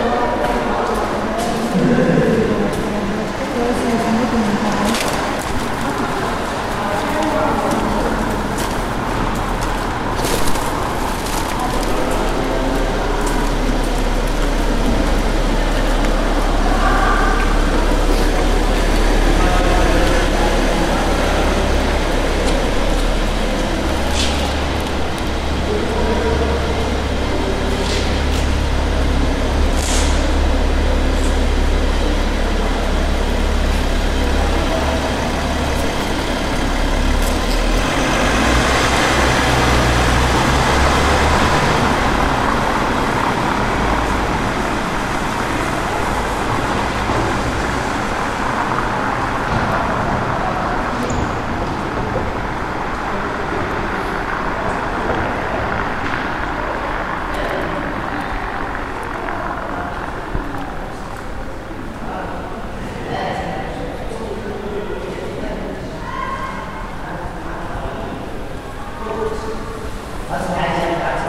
The sound in the rear vaulted porch of the cathedral of santiago in bilbao.
Biscay, Spain